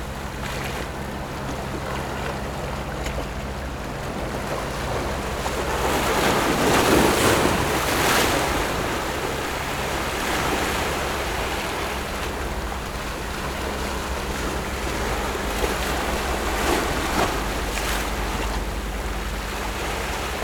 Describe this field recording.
Rocks and waves, Very hot weather, Zoom H6 Ms+ Rode NT4